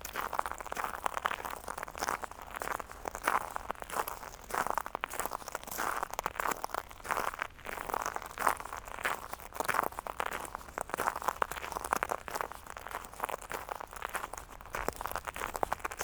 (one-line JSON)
{"title": "Cadzand, Nederlands - Walking on shells", "date": "2019-02-17 09:50:00", "description": "On the large Cadzand beach, walking on the shells during the low tide.", "latitude": "51.39", "longitude": "3.41", "timezone": "GMT+1"}